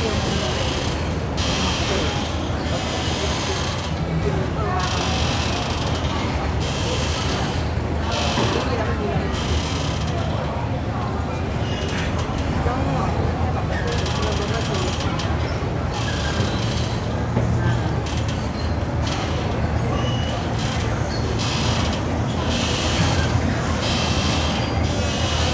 Phra Borom Maha Ratchawang, Phra Nakhon, Bangkok, Thailand - drone log 09/03/2013 b
Than Thien Pier
(zoom h2, build in mic)
9 March 2013, ~16:00